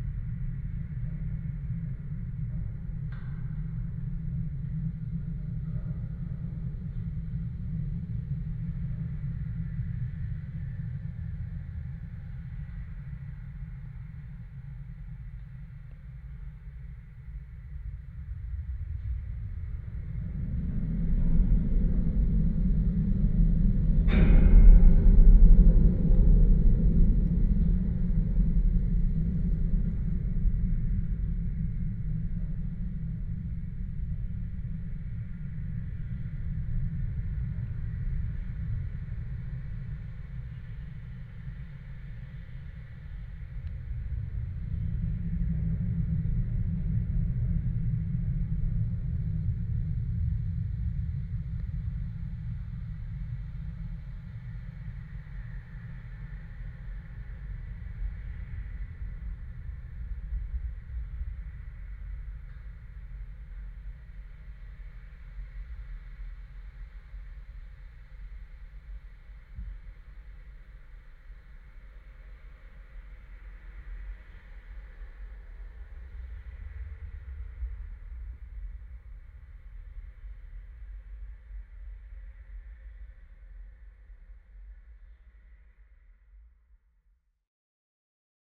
Utena, Lithuania, metallic fence
there's street repair works and metallic fences everywhere. contact microphones recording
30 June, 18:05